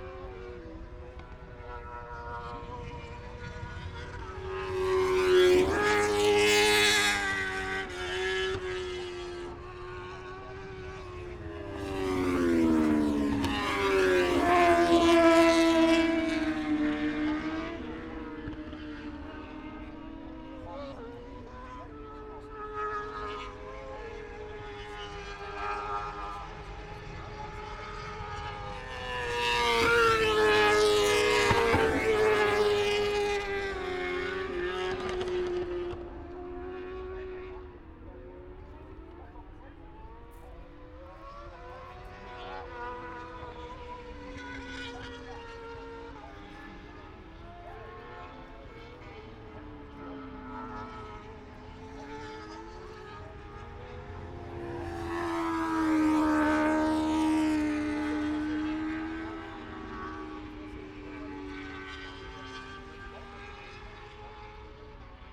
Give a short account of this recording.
moto two ... qualifying ... open lavaliers clipped to chair seat ...